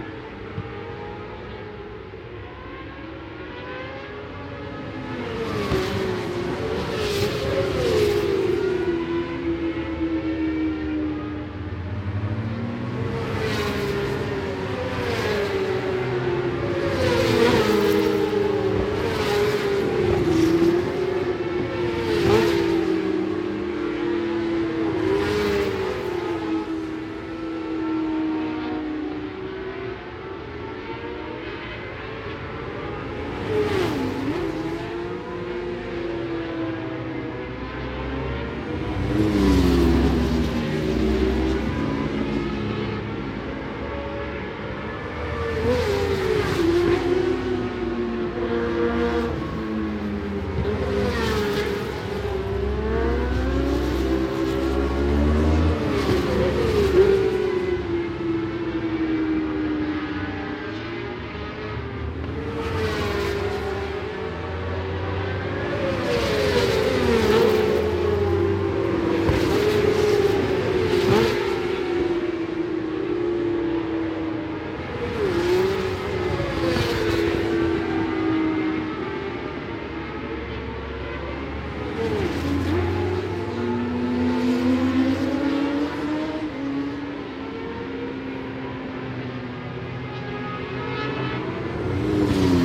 Scratchers Ln, West Kingsdown, Longfield, UK - British Superbikes 2005 ... FP1(contd) ...
British Superbikes 2005 ... free practice one(contd) ... the Desire Wilson stand ... one point stereo mic to minidisk ...
26 March 2005